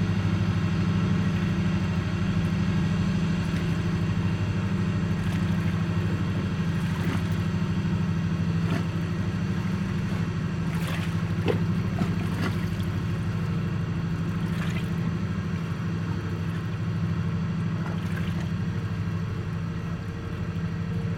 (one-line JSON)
{"title": "Riemst, Belgique - The Albert canal", "date": "2018-10-19 21:40:00", "description": "One of my favourite place : at night it's cold, snuggled in a sleeping bag, drinking an hot infusion, looking to the barges driving on the canal, far away the very beautiful Kanne bridge. One of the boat was the Puccini from Remich (Luxemburg, MMSI: 205522890), and I sound-spotted it driving 3 times !", "latitude": "50.81", "longitude": "5.67", "altitude": "60", "timezone": "Europe/Brussels"}